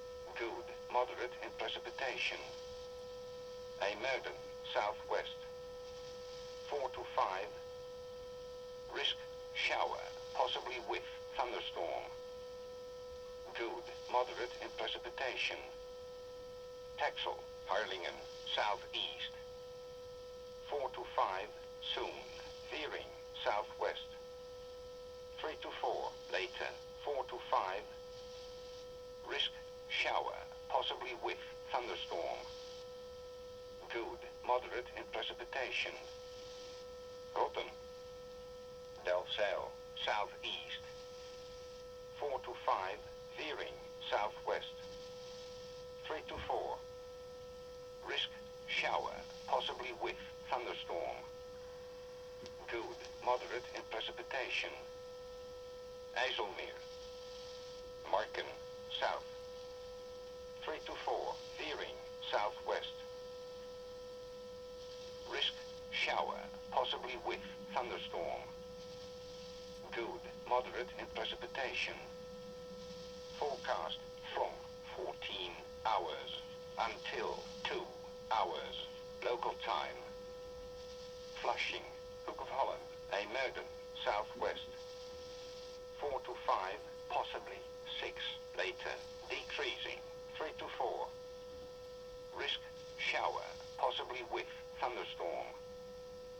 workum, het zool: marina, berth h - the city, the country & me: marina, aboard a sailing yacht
listening to the wheather forecast of the netherlands coastguard at 11 p.m.
the city, the country & me: july 21, 2009
Workum, The Netherlands